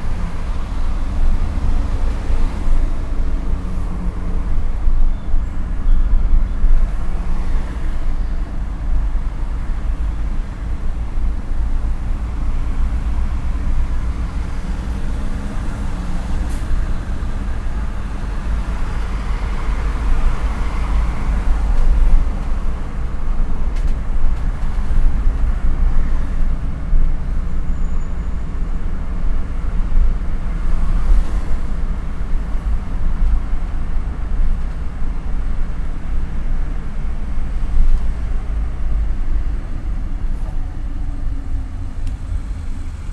cologne, innere kanalstrasse, traffic in zhe morning - cologne, innere kanalstrasse, traffic in the morning
soundmap: köln/ nrw
im berufverkehr morgens
project: social ambiences/ listen to the people - in & outdoor nearfield recordings
9 June